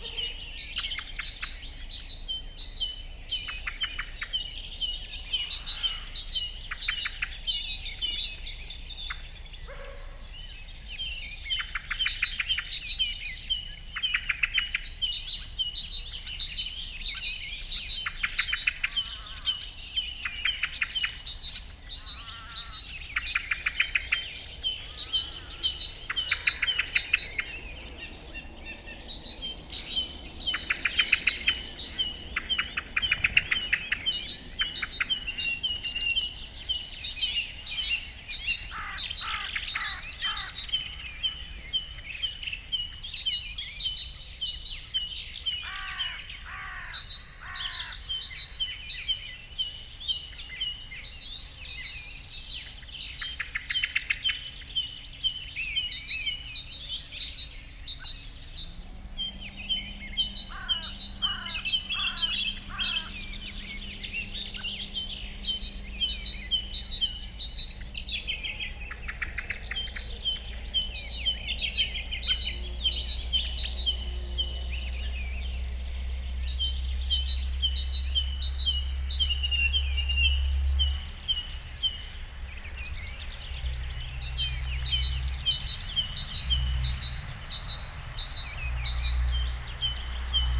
Stružinec, Jistebnice, Česko - morning birdscape in the fields
open hilly landscape: fields, and pastures with scattered vegetation; small cottage nearby with occasional traffic, otherwise pretty quiet
recording equipment: Zoom f8n with Audio-Technica BP4025 stereo mic
Jihozápad, Česko